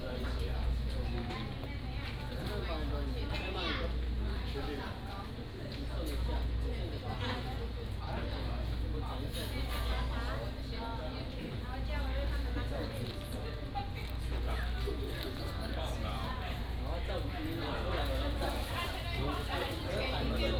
椰油村, Koto island - In the convenience store inside
In the convenience store inside, The island's only modern shops